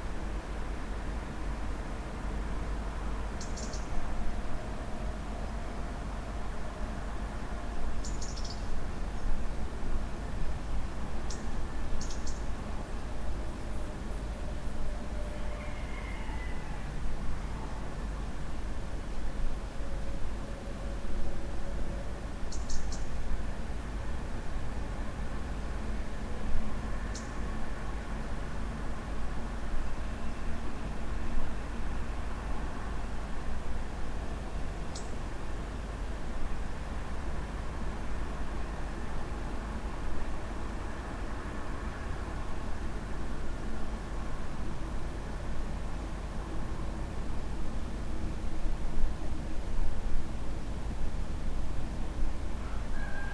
Viseu, fifth floor H06.30